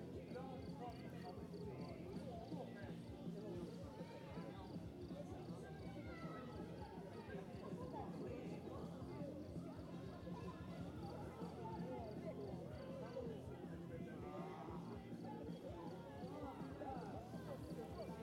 провулок Прибузький, Вінниця, Вінницька область, Україна - Alley12,7sound16makeshiftbeach
Ukraine / Vinnytsia / project Alley 12,7 / sound #16 / makeshift beach